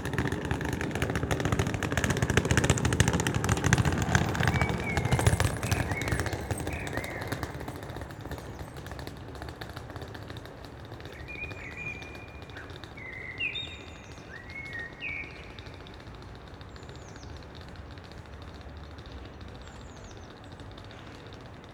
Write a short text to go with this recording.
evening ambience in between housing area and court district / job center, few people passing-by, blackbirds, distant train. the area seems deserted after business hours. (SD702, Audio Technica BP4025)